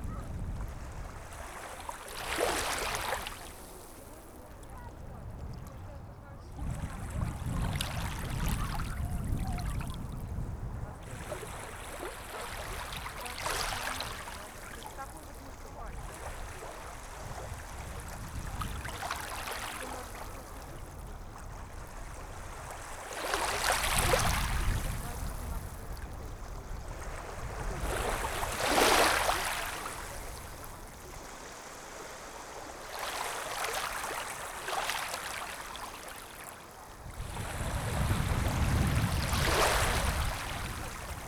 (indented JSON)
{
  "title": "Latvia, Jurmala, autumnal beach",
  "date": "2010-09-12 15:40:00",
  "description": "just 7 minuts on the Jurmala beach in september",
  "latitude": "56.99",
  "longitude": "23.86",
  "timezone": "Europe/Riga"
}